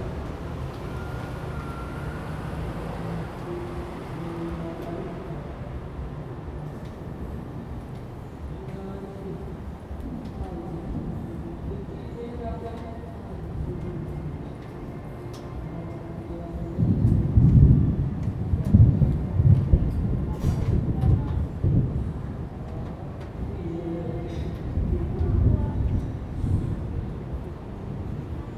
{"title": "碧潭食堂, Xindian Dist., New Taipei City - At the door of the restaurant", "date": "2015-07-28 15:18:00", "description": "At the door of the restaurant, Traffic Sound, Thunder, Raindrop sound\nZoom H2n MS+ XY", "latitude": "24.96", "longitude": "121.53", "altitude": "20", "timezone": "Asia/Taipei"}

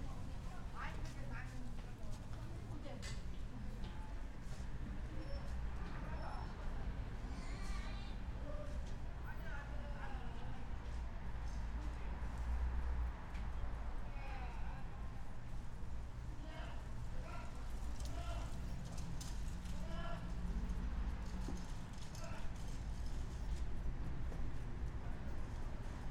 {"title": "Hankuk Univ. of Foreign Studies Station - 외대앞 crossing alarm", "date": "2019-02-06 14:00:00", "description": "One of the few level crossings in Seoul...there have always been crossing guides there on my (few) visits...", "latitude": "37.60", "longitude": "127.06", "altitude": "21", "timezone": "Asia/Seoul"}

